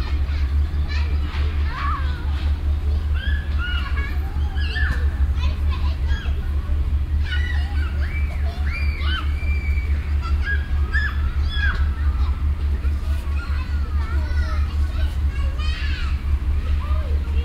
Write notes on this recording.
stereofeldaufnahmen im mai 08 - morgens, project: klang raum garten/ sound in public spaces - in & outdoor nearfield recordings